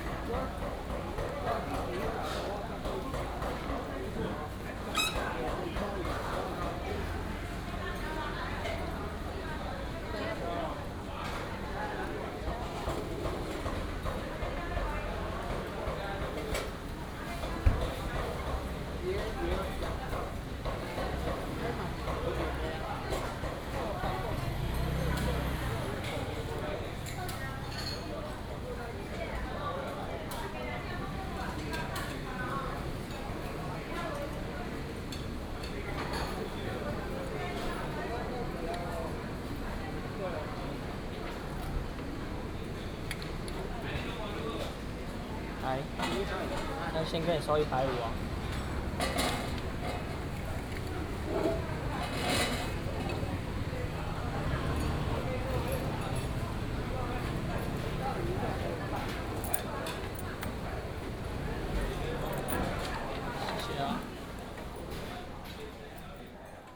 Ln., Huatan St., Huatan Township - Mutton shop
in the traditional market, Mutton shop
Changhua County, Taiwan, 18 March 2017, ~11:00